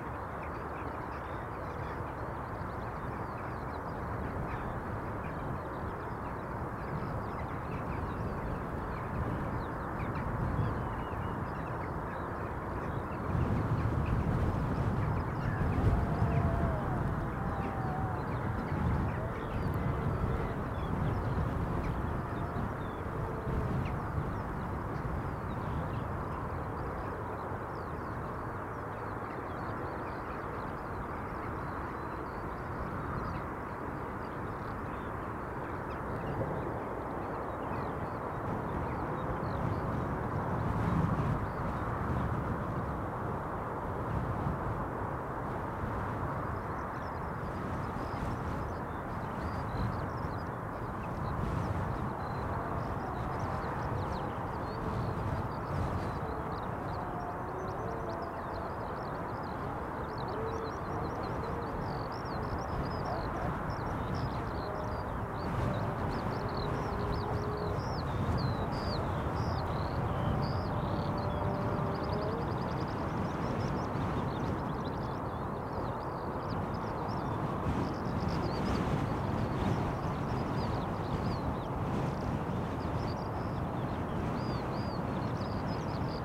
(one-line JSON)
{"title": "Contención Island Day 50 inner south - Walking to the sounds of Contención Island Day 50 Tuesday February 23rd", "date": "2021-02-23 10:50:00", "description": "The Drive Moor Crescent Great North Road Grandstand Road\nWind\nsound is tossed and shredded\nby the gusts\nthe skylarks sings\nabove the gale\nJackdaws stay low\ndogwalkers wrapped against the weather", "latitude": "54.99", "longitude": "-1.62", "altitude": "65", "timezone": "Europe/London"}